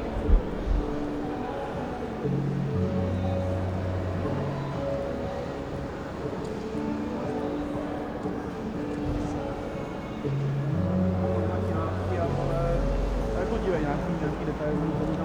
Běhounská, Brno-střed, Česko - St. James Square (Jakubské náměstí)
Recorded on Zoom H4n + Rode NTG 1, 14.10. 2015 around midnight.
Jihomoravský kraj, Jihovýchod, Česko, October 2015